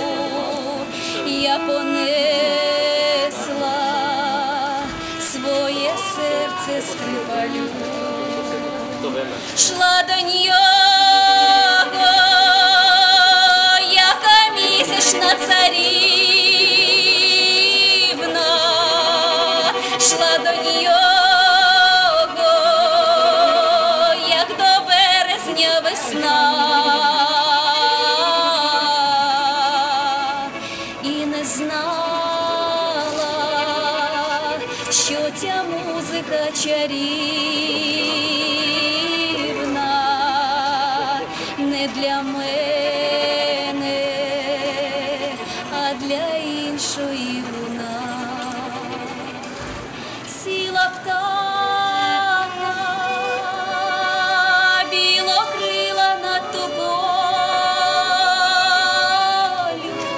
Česká street, Brno, Czech Republic - Belarussian buskers
Musicians of ”Krupickie Muziki“ from Belarus busking on a street in the heart of Brno.
Recorded along with a moving picture using Olympus XZ-1 camera.